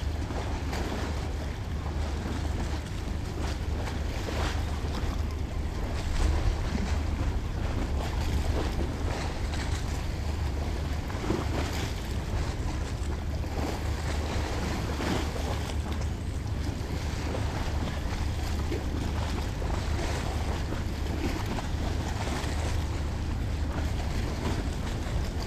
sounds of seagulls and waves pounding the Karakoy Pier